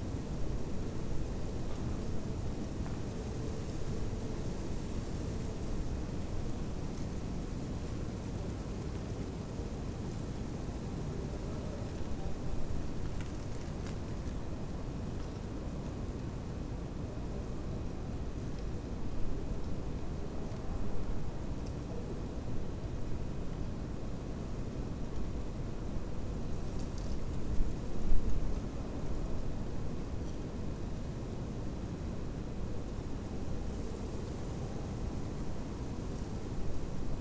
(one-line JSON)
{"title": "Berlin: Vermessungspunkt Friedel- / Pflügerstraße - Klangvermessung Kreuzkölln ::: 31.01.2012 ::: 02:37", "date": "2012-01-31 02:37:00", "latitude": "52.49", "longitude": "13.43", "altitude": "40", "timezone": "Europe/Berlin"}